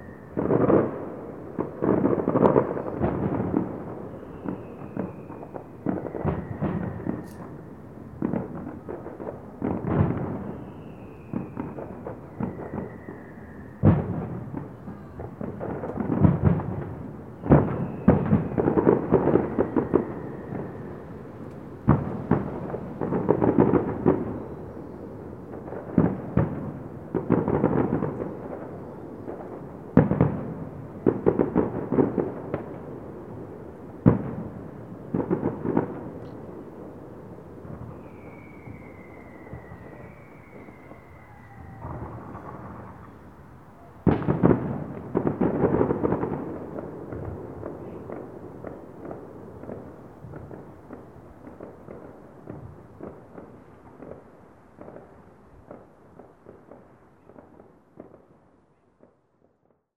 Montreuil, France - Sound of Fireworks on Bastille Day
Commemoration of the Bastille Day in Paris, 2016.
Sound of fireworks heard in Montreuil.
Zoom H4n
13 July, 23:59